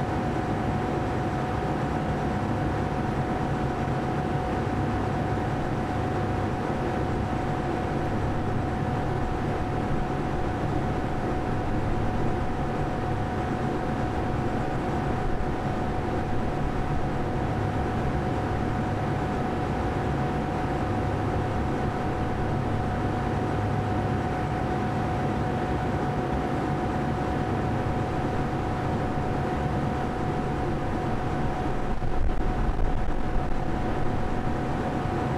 ESAD, Caldas da Rainha, Portugal - 2º place
place-roof
recording-H4N Handy Recorder
situation-sound pickup from the noise of the air conditioner
techniques-stereophonic pickup